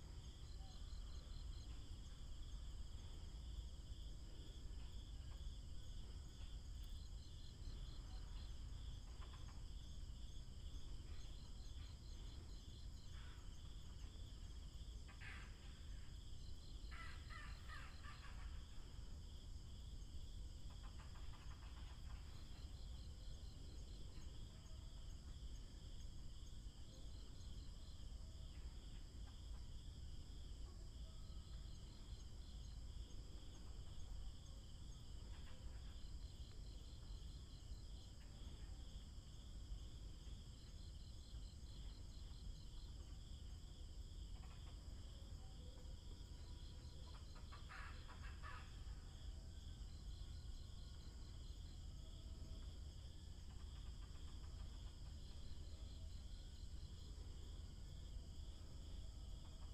新竹市立自由車場, Hsinchu City - Facing the woods
early morning, Insects sound, Squirrel call, birds sound, Binaural recordings, Sony PCM D100+ Soundman OKM II